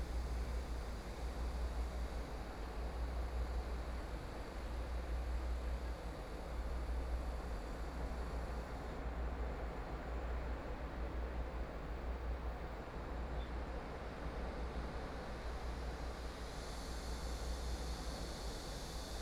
壯圍鄉過嶺村, Yilan County - Sound wave
Sound wave, Windbreaks, Birdsong sound, Small village
Sony PCM D50+ Soundman OKM II
Zhuangwei Township, Yilan County, Taiwan, July 2014